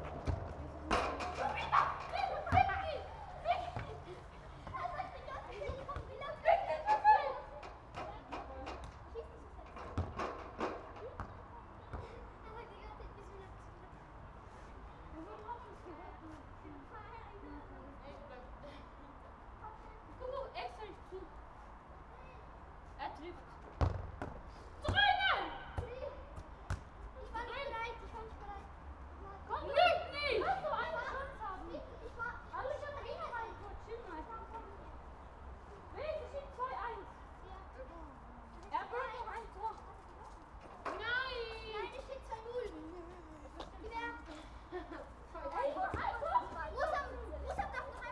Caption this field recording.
kids playing soccer, (Sony PCM D50)